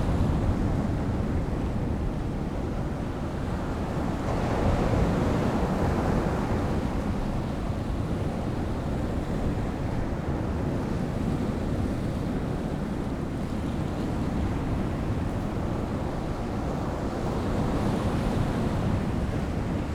Bamburgh Lighthouse, The Wynding, Bamburgh, UK - incoming tide ...
incoming tide ... lavalier mics clipped to a bag ... in the lee of wall ... blowing a hooley ...